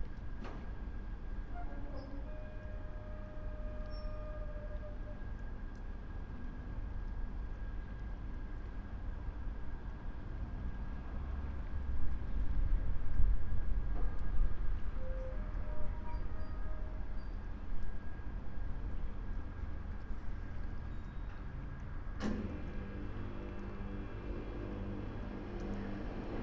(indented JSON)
{
  "title": "Hunte, balance train bridge, Oldenburg, Deutschland - ships passing-by, train bridge closes",
  "date": "2016-02-27 15:25:00",
  "description": "train bridge over the river Hunte. The bridge has a special construction to open for ships to pass through (german: Rollklappbrücke). Sound of ships, a warn signal, bridge swinging back to it's normal position, cyclists and pedestrians crossing.\n(Sony PCM D50, Primo EM172)",
  "latitude": "53.14",
  "longitude": "8.23",
  "timezone": "Europe/Berlin"
}